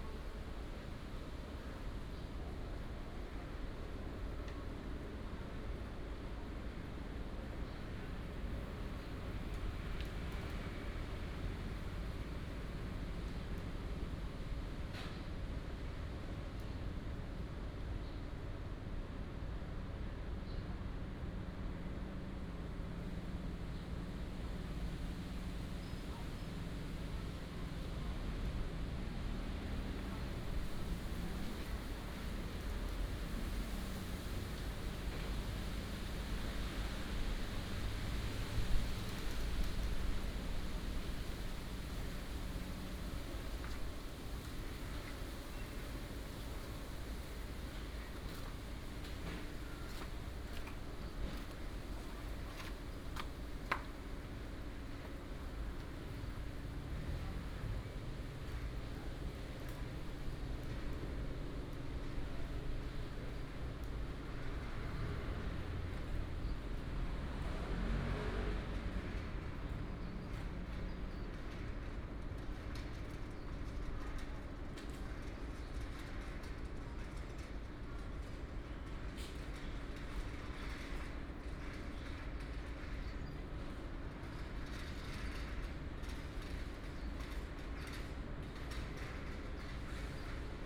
Changhe Park, North Dist., Hsinchu City - wind and Leaves
in the Park, wind and Leaves, Dog, Binaural recordings, Sony PCM D100+ Soundman OKM II